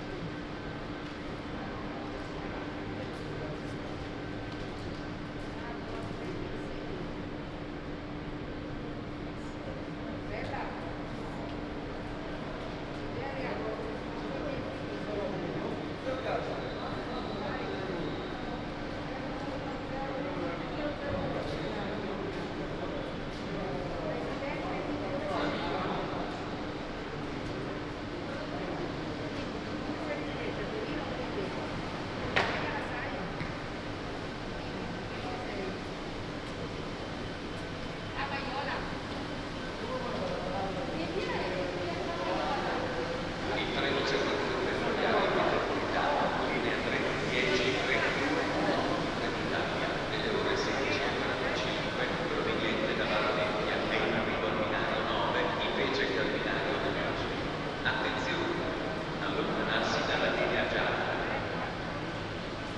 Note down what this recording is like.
Wednesday March 11 2020. Walking in San Salvario district to Porta Nuova railway station and back;, Turin the afternoon after emergency disposition due to the epidemic of COVID19. Start at 4:25 p.m. end at 5:01 p.m. duration of recording 36'12'', The entire path is associated with a synchronized GPS track recorded in the (kml, gpx, kmz) files downloadable here: